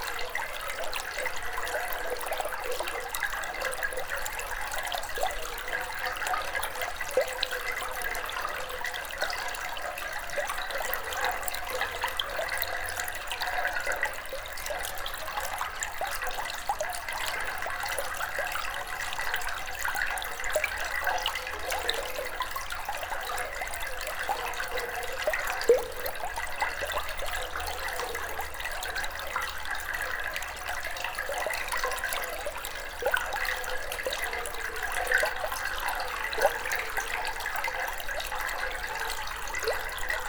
Chambery, France - Train tunnel
Into an underground mine train tunnel, water is quietly flowing.